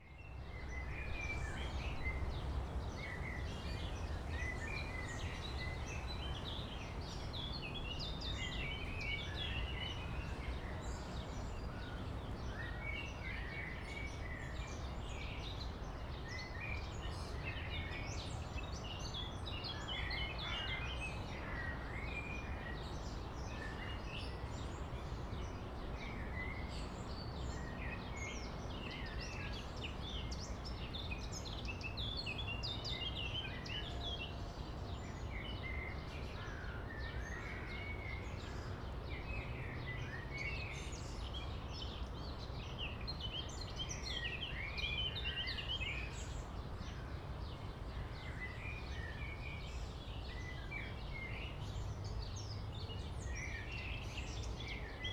The Rauenberg primary triangulation point is the starting point for the calculation of geographic coordinates of the Prussian main triangular mesh spatial reference system (Hauptdreiecksnetz). As the origin, it defines the location and orientation of the modern German triangular mesh spatial reference system in relation to the reference surface of a chosen Bessel ellipsoid.
Latitude and longitude (location) as well as the azimuth (orientation) are derived from astronomical measurements of the years 1853 and 1859.